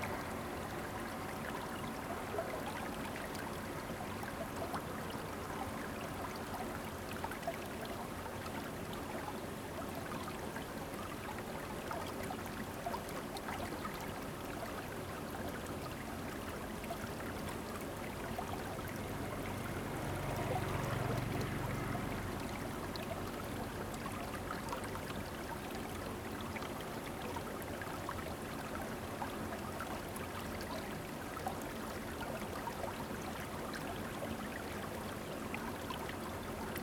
松浦里, Yuli Township - Stream
Stream, Traffic Sound, Irrigation waterway
Zoom H2n MS+ XY
Yuli Township, Hualien County, Taiwan, 8 October 2014, ~17:00